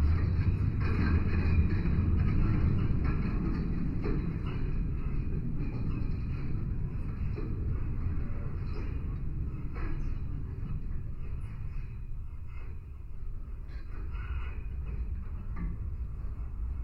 Leliūnų sen., Lithuania, metallic fence
contact microphones placed on metallic fence